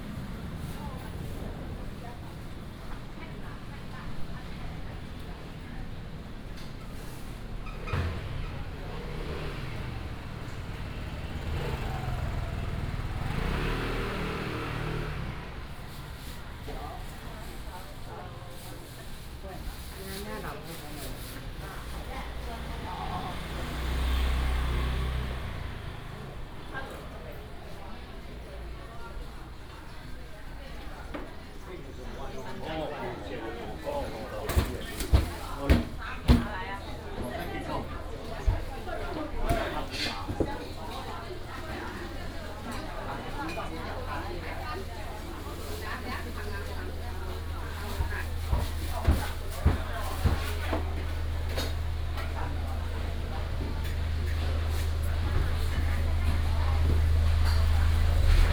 楊梅第一市場, Yangmei Dist., Taoyuan City - Old traditional market
Old traditional market, traffic sound, vendors peddling Binaural recordings, Sony PCM D100+ Soundman OKM II
Taoyuan City, Taiwan, August 2017